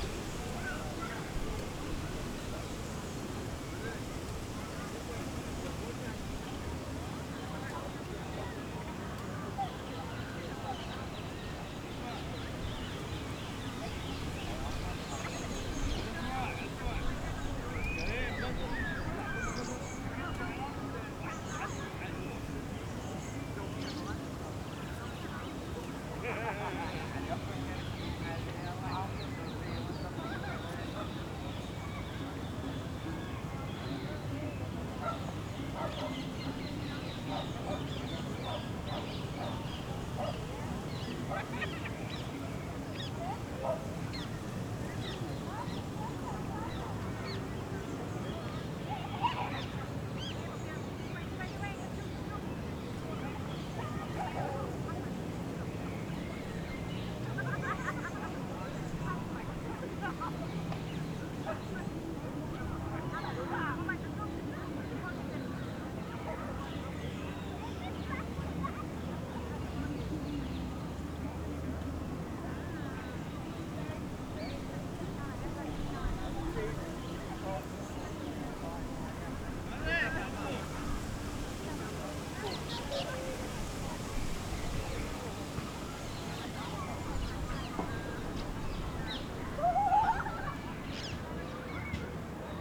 Wasser (Rhein), Wind, Sonne, gelöste Stimmung der Menschen, urbane Hintergründe (Zug, Auto, Glocken), Motorboot, Vögel.
Baden-Württemberg, Deutschland, 26 May 2022